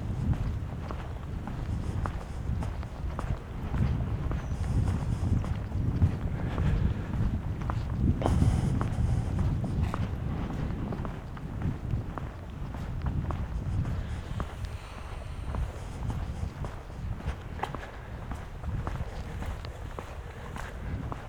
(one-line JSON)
{"title": "burg/wupper: müngstener straße - bring it back to the people: miniatures for mobiles soundwalk", "date": "2012-11-27 13:10:00", "description": "miniatures for mobiles soundwalk (in a hurry)\na test walk through my miniature \"heimat, liebe\"; from müngstener straße to eschbachstraße\nbring it back to the people: november 27, 2012", "latitude": "51.14", "longitude": "7.14", "altitude": "117", "timezone": "Europe/Berlin"}